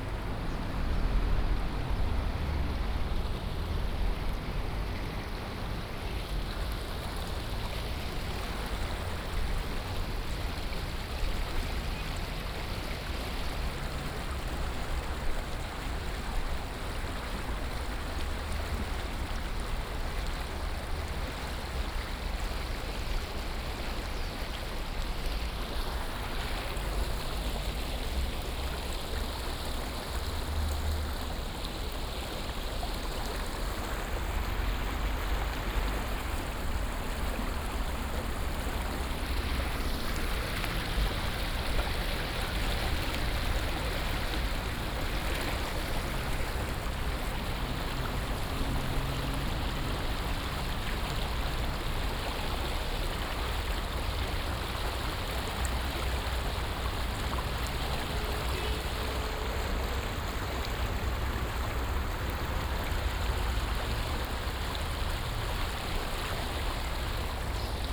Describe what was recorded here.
Under the bridge, streams sound, Traffic Sound